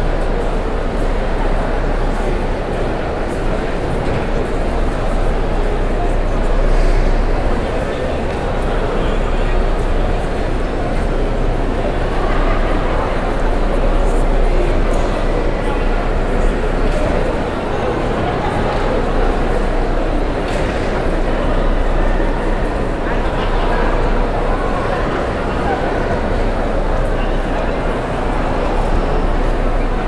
Park Station, Johannesburg, South Africa - walking into Park Station...
coming from Gautrain Station walking over into Park Station...
7 November